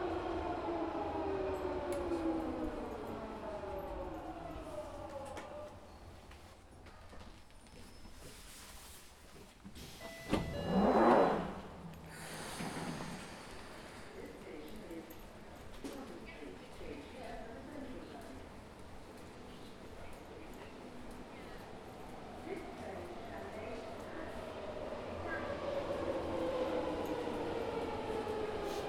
Start: Jubilee Line Northbound platform at London Waterloo underground station.
00:01:00 One train arrives and leaves
00:02:30 Another train comes. I get on.
00:04:27 Arrives at Westminster
00:05:20 Leaves Westminster
00:06:40 Arrives at Green Park
00:07:15 Leaves Green Park
00:08:30 Arrives Bond Street. I get off.
00:09:00 Another train arrives at the Southbound platform
00:09:30 Escalators (1)
00:10:15 Escalators (2)
00:11:00 Ticket barriers
00:11:22 Stairs to Oxford Street
00:11:45 Walk onto Oxford Street
00:12:30 Wait at crossing
00:13:05 Crossing beeps. I don't cross.
00:14:00 I cross
00:14:10 Walk down the side of Debenhams
00:15:00 Walking down Marylebone Lane, Henrietta Place, Welbeck Street
00:16:00 Crossing Wigmore Street to Wigmore Hall